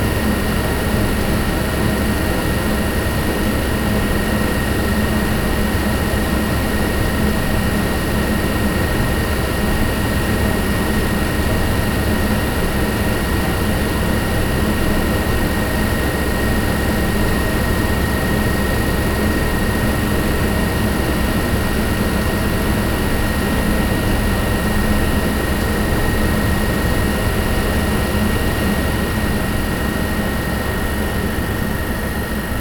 ventilation and heating system in the cellar of the house timmering.
also location of a video installation by Dominik Lejman during the biennale for international light art
Nordrhein-Westfalen, Deutschland, European Union